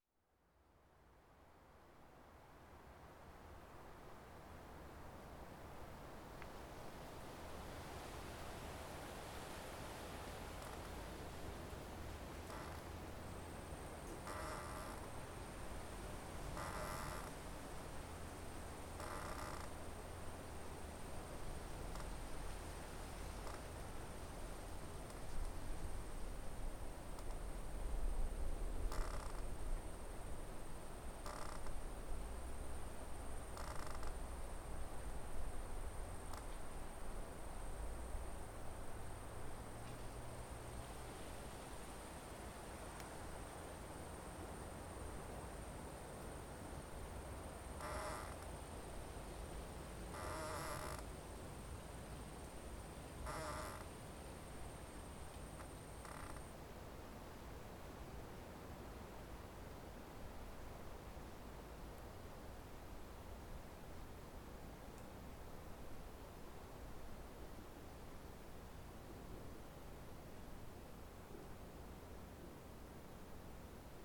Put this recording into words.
Screeching tree/Vajkard/International Workshop of Art and Design/Zoom h4n